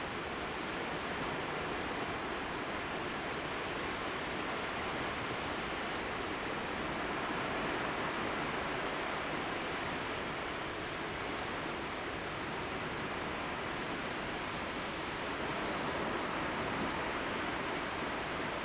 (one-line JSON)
{"title": "新月沙灣, 新竹縣竹北市 - At the beach", "date": "2017-09-21 09:53:00", "description": "At the beach, Sound of the waves, Zoom H2n MS+XY", "latitude": "24.87", "longitude": "120.94", "altitude": "8", "timezone": "Asia/Taipei"}